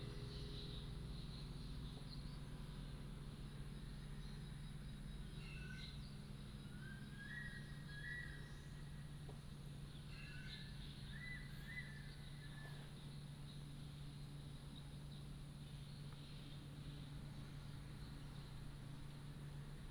Birdsong
Binaural recordings
Sony PCM D100+ Soundman OKM II